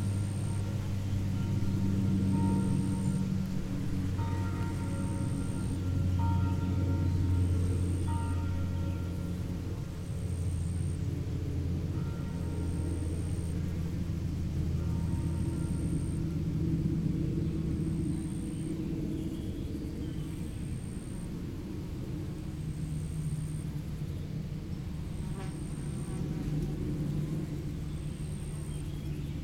Il est midi à Vions, la cloche sonne mais un avion de tourisme trace un bel effet Doepler dans le ciel, je me suis abrité du vent sur le côté d'un champ de tournesols, les feuillages voisins bruissent au rythme du vent. C'est très international sur la piste cyclable.